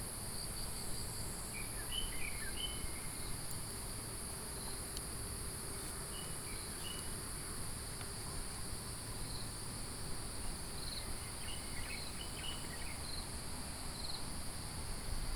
桃米溪, 埔里鎮桃米里, Taiwan - Walking along beside the stream
Walking along beside the stream, Traffic Sound